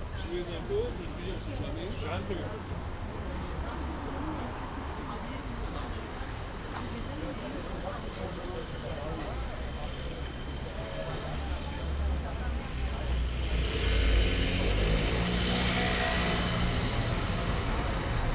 "Friday night walk in Paris, before curfew, in the time of COVID19": Soundwalk
Friday, October 16th 2020: Paris is scarlett zone for COVID-19 pandemic.
One way trip walking from Cité de la Musique Concert Hall (Gerard Grisey concert), to airbnb flat. This evening will start COVID-19 curfew from midnight.
Start at 10:41 p.m. end at 11:42 p.m. duration 01:01:17
As binaural recording is suggested headphones listening.
Path is associated with synchronized GPS track recorded in the (kmz, kml, gpx) files downloadable here:
For same set of recording go to: